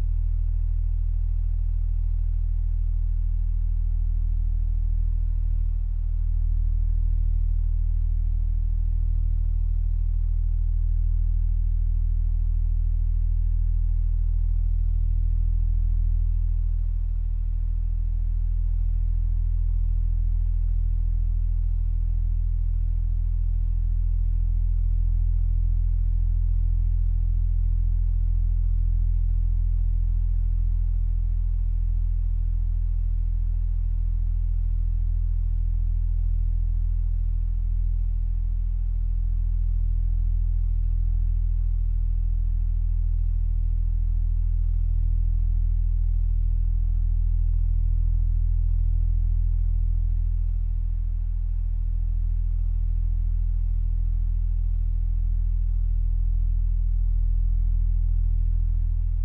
a fan in my office makes a beautiful, rich, standing wave. recorder was placed on the side of the fan.